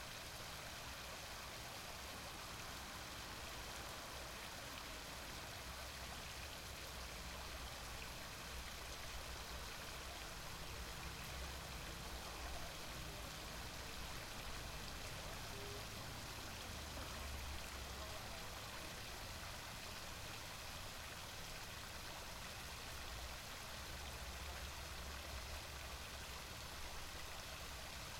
Rijeka, pl. Ivan Zajc, Fontana